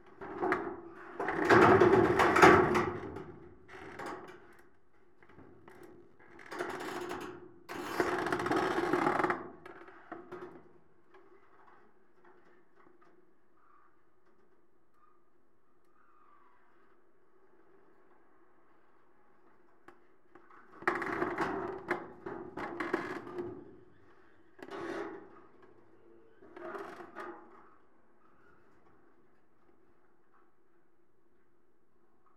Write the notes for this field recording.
métal palisade moving by the action of the wind, + rubbing of tree branches, Captation : ZOOM H4n